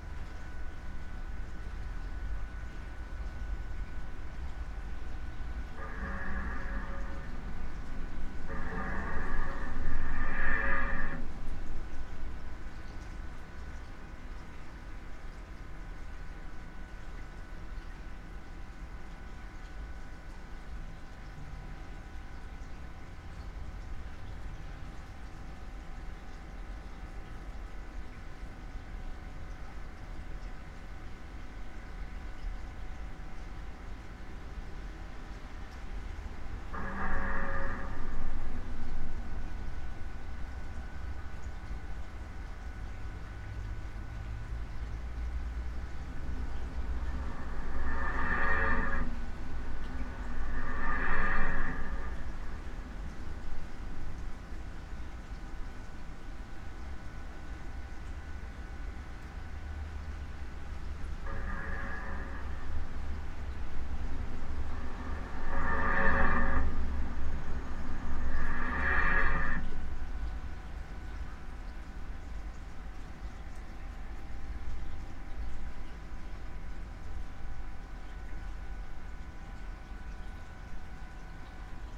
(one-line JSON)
{"title": "Utena, Lithuania, under the bridge, re-visited", "date": "2018-07-17 11:30:00", "description": "so, after 7 years, I try to make some kind of study of the same bridge again. some changes under the bridge - there's new pedestrian trail...I places omni mics and contact mic on bridge construction and the recordin is the mix of there sources", "latitude": "55.50", "longitude": "25.60", "altitude": "103", "timezone": "Europe/Vilnius"}